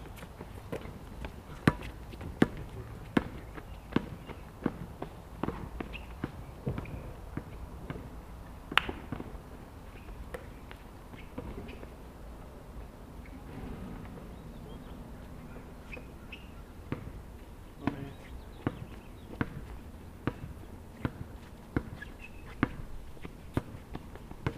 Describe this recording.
and now, finishing up at PSP, a game of 4 on 4 develops.